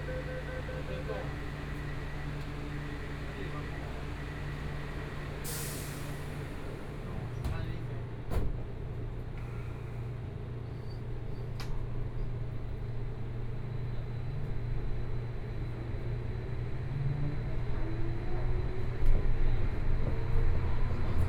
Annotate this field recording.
from Jiannan Road Station to Dazhi Station, Binaural recordings, Zoom H4n+ Soundman OKM II